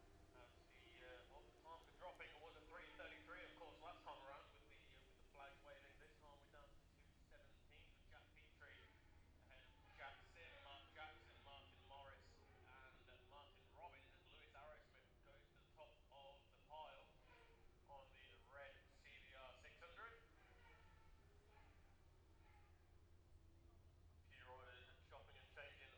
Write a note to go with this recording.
the steve henshaw gold cup 2022 ... 600 group one practice ... dpa 4060s on t-bar on tripod to zoom f6 ... red-flagged then immediate start ...